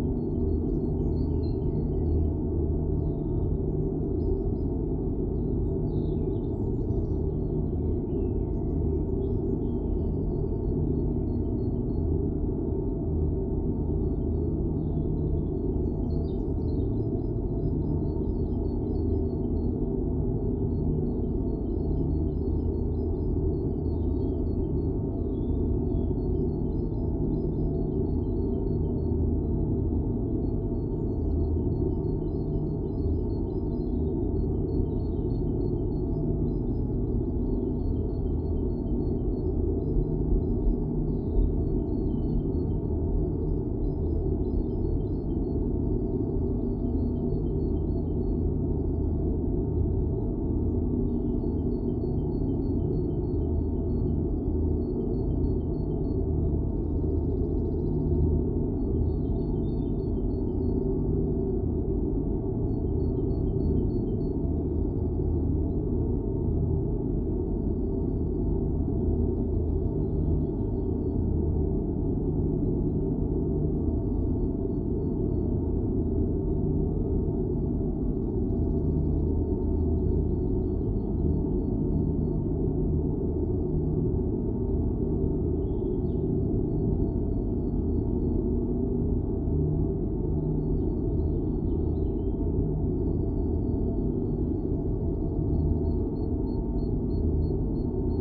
Königsheide, Berlin, Deutschland - well, Brunnen 19
Berlin Königsheide, one in a row of drinking water wells, now suspended. Material resonances in the metal cover
(Sony PCM D50, DIY contact microphon)
30 April 2022